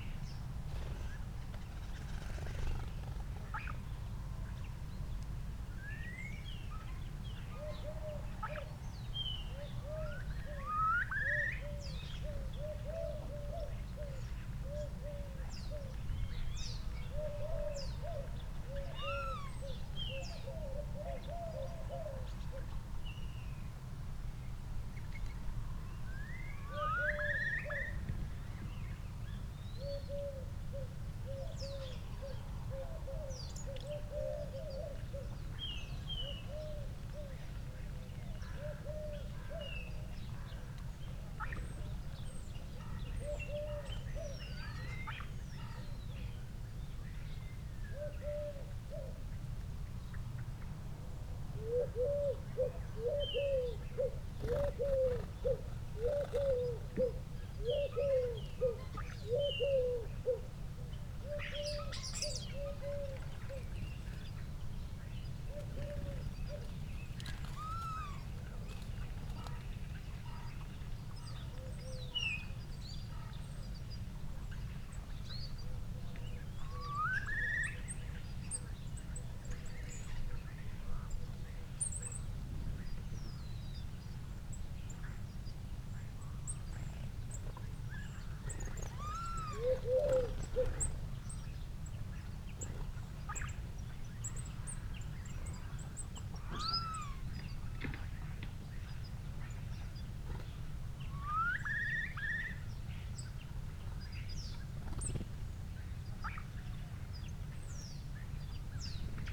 Luttons, UK - starling calls soundscape ...
starling calls soundscape ... purple panda lavaliers clipped to sandwich box to olympus ls 14 ... crow ... collared dove ... house sparrow ... blackbird ... dunnock ... robin ... wren ... blue tit ... jackdaw ... recorded close to bird feeders ... background noise ...
Malton, UK, 26 December, ~08:00